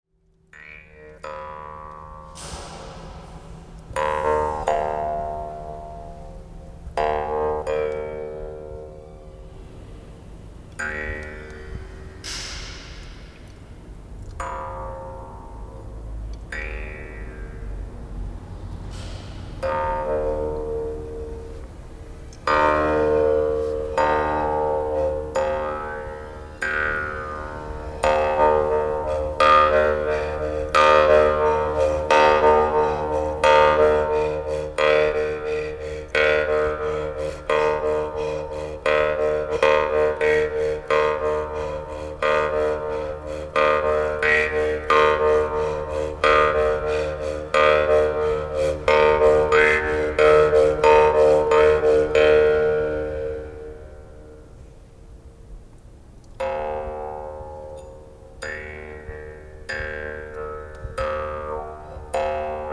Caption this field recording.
Jew's Harp in the little church of Biville, Zoom H6 + 4 microphones...